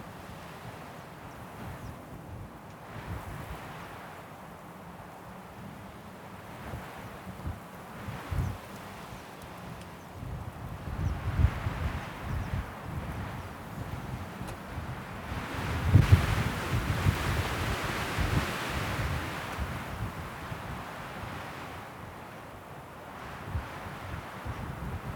Changhua County, Taiwan - The sound of the wind
The sound of the wind, Zoom H6
4 January 2014, ~14:00